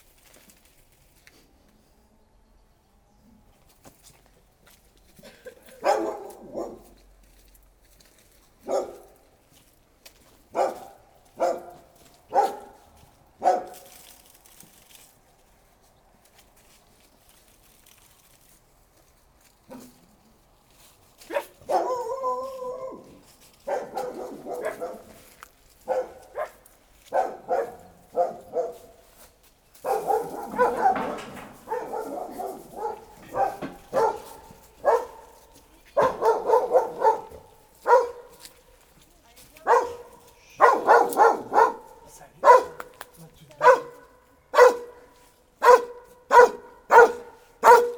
Maintenon, France - Pepita the dog
In a small path with big unruffled concrete walls, a dog is barking. It's name is Pepita. We know perfectly it barks the same everytime we pass here. This place is really a small city of dogs, it's shouting in every street !